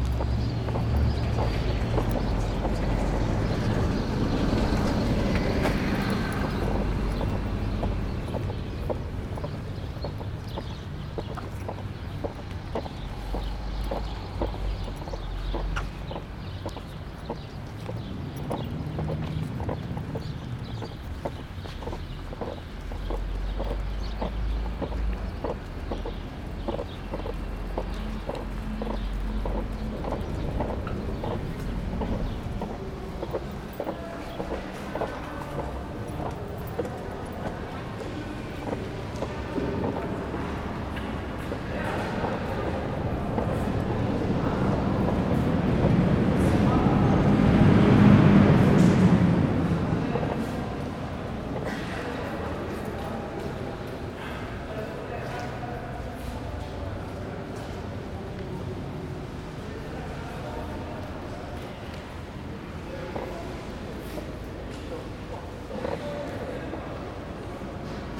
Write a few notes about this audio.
*Listen with headphones for best acoustic results. A short acoustic documentation of tones of spaces at Hauptbahnhof Weimar (Main Station of Weimar), First 35 seconds: ambience outside, 36 to 2:25: ambience inside hall, 2:26 to end: ambience of platform 3. Recording and monitoring gear: Zoom F4 Field Recorder, LOM MikroUsi Pro, Beyerdynamic DT 770 PRO/ DT 1990 PRO.